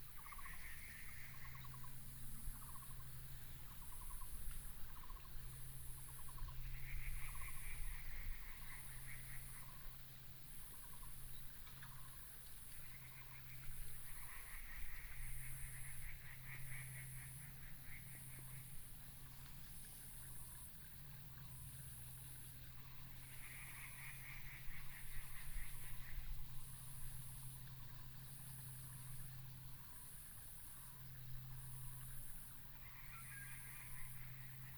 東眼產業道路, Sanxia Dist. - Bird call
traffic sound, Bird call, The plane flew through, In the mountains of the road side